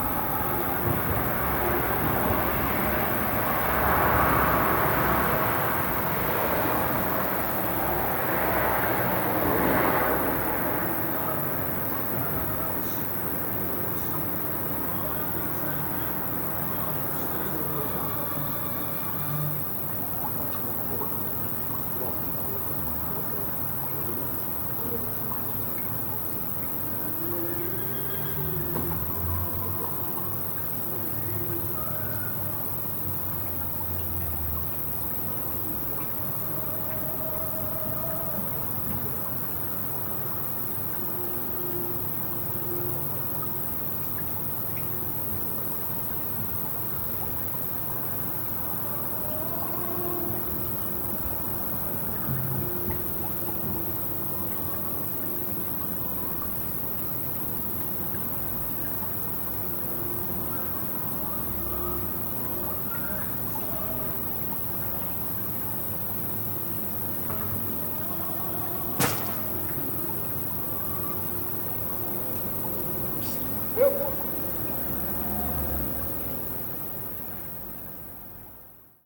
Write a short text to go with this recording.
Another 'hybrid' mix between natural sources and human sounds (acoustic as well as electroacoustic). Unidentified orthoptera, small canal, radio/tv opera, kitchen noises & voices, passing cars, church bells...: it's 10 pm and i'm enjoying the night.